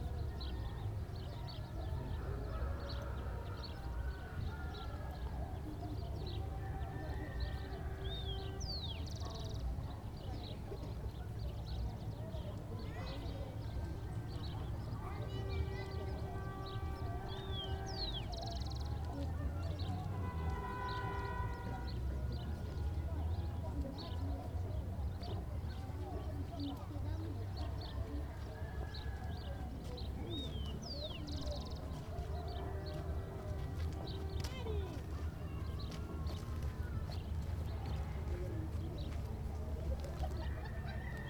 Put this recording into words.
Pachacutec Shanty Town, Early Morning Ambience. World Listening Day. WLD.